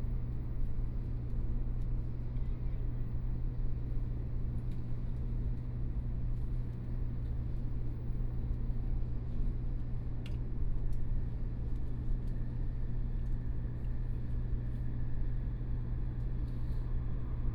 Banqiao District, New Taipei City - Taiwan High Speed Rail
Taiwan High Speed Rail, from Taipei Station to Banqiao Station, Messages broadcast station, Zoom H4n+ Soundman OKM II
Banqiao District, 華翠大橋(萬華), 30 January 2014, 18:50